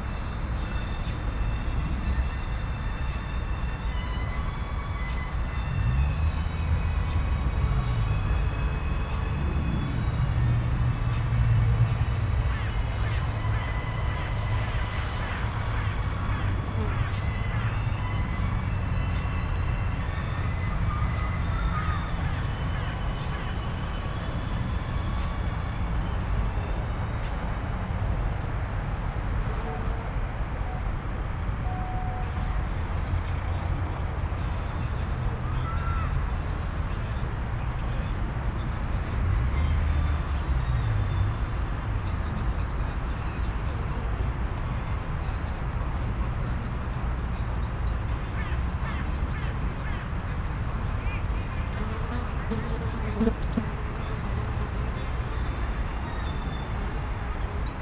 berlin wall of sound-ex-deathstrip, freiheit gartenkolonie. j.dickens 020909